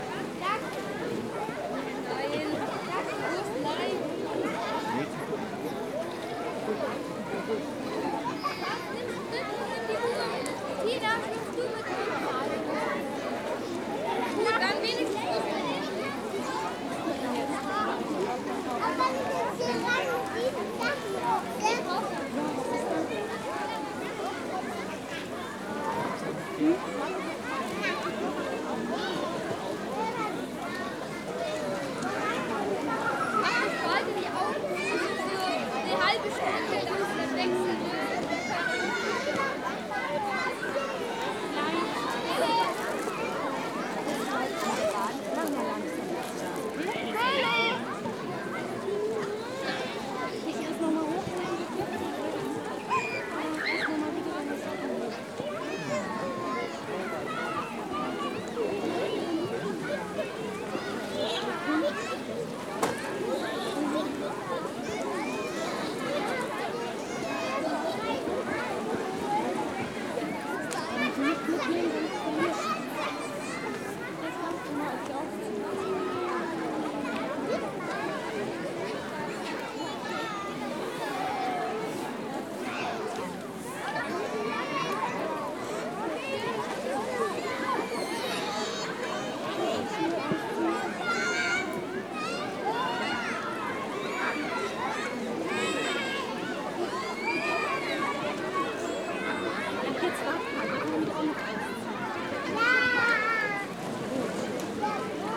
Neu-Ulm, Deutschland - Summer Kids
A small Lake where kids and people are playing and chilling
Neu-Ulm, Germany, August 2012